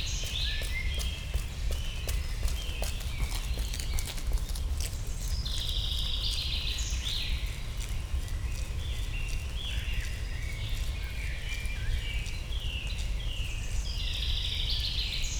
Sielsian Park, Chorzów, Siemianowice - park ambience /w light rain
Śląski Park Kultury, Silesian Park, ambience within park, deep drone from rush hour traffic far away, a few cyclists passing by
(Sony PCM D50, DPA4060)
Chorzów, Poland, 22 May 2019